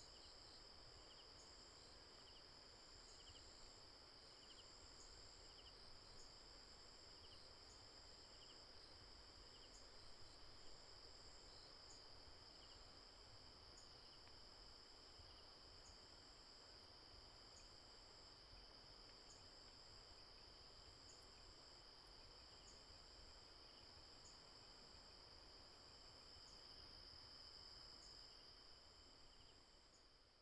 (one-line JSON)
{"title": "Tapaw Farm, 達仁鄉台東縣 - Late night in the mountains", "date": "2018-04-06 01:59:00", "description": "Late night in the mountains, Bird cry, Insect noise, Stream sound", "latitude": "22.45", "longitude": "120.85", "altitude": "253", "timezone": "Asia/Taipei"}